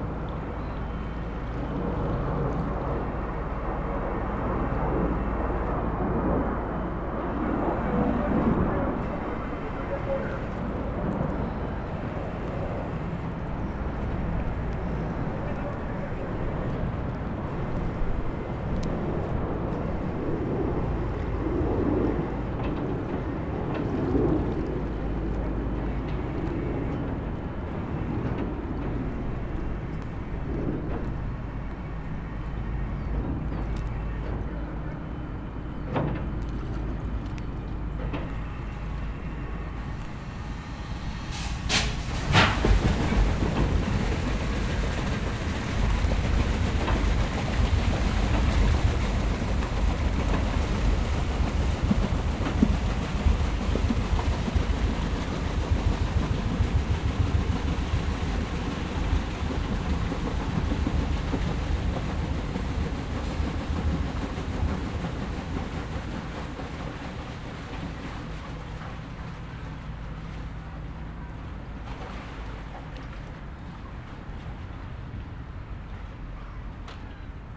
Ecluse de Marchienne, Charleroi, België - Ecluse de Marchienne
Boat passing through the canal lock, geese protesting loudly
Charleroi, Belgium